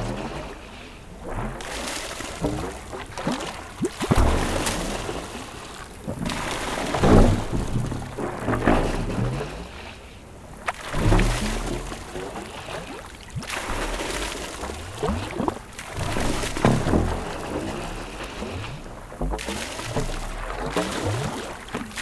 On this evening because of a storm, this place is flooded. Since monthes here, some people construct homes. As there's a lot of water in the bedrock, actually enormous holes in the ground, some big pumps are installed. When it's near to be empty in the bedrocks holes, the pipes make strange mad sounds of reflux. The pipes are recorded near the river, where water is thrown.